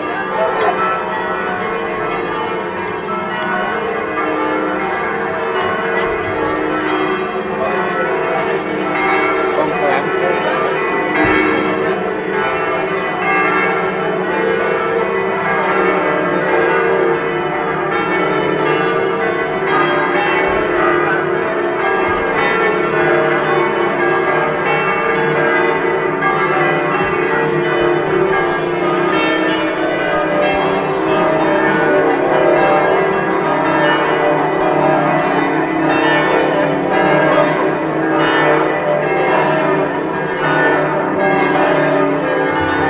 Poschiavo, Schweiz - Festtagsglocken mal zwei
Festtagsglocken mal zwei die sich konkurrenzieren auf der Piazza zwischen der Feier und dem Beginn des Nationalfeiertages